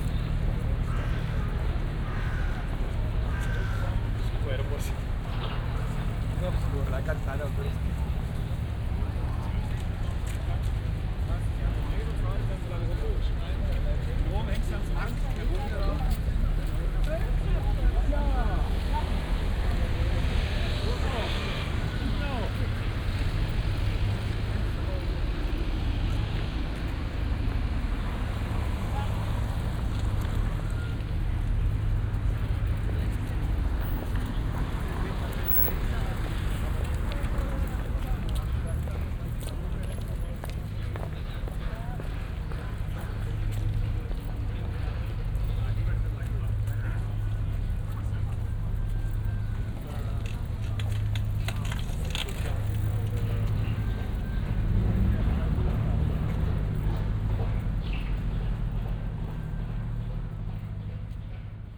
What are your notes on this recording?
seeing as many sites as possible on my day and a half trip to Berlin... next destination Hackescher Markt...